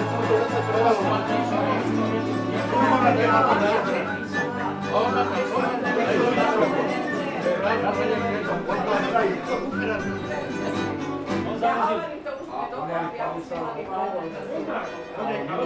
Bratislava, Drevená dedina - Trampský večer - Tramp evening
Every wednesday evening Bratislava‘s Tramps are gathering in some of the few remaining long standing pubs to celebrate their tradition, drinking and singing together.